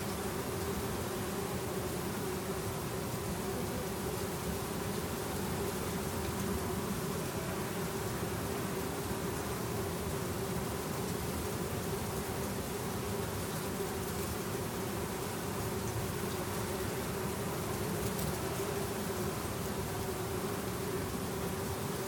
Templo Sur, Monte Albán, Oax., Mexico - Bees in White Flowering Tree
Recorded with a pair of DPA4060s and a Marantz PMD661